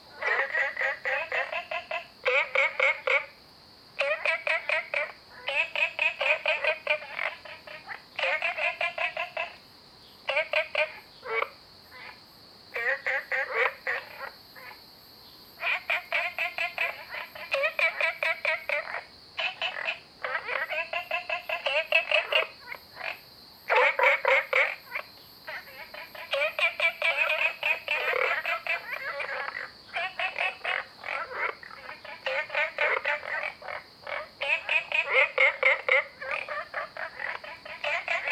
綠屋民宿, 桃米里 Taiwan - Frogs chirping and Cicadas cry
Frogs chirping, Cicadas cry, Ecological pool
Zoom H2n MS+XY